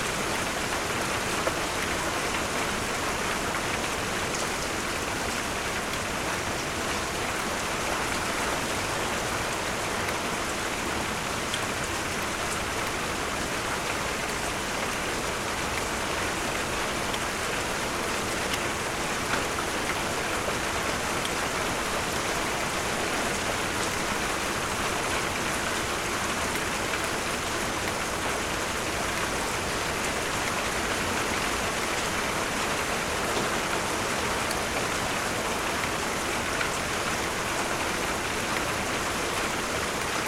Rainstorm, Armstrong Ave
Rainstorm heard through the window - Armstrong Ave, Heaton, Newcastle-Upon-Tyne, UK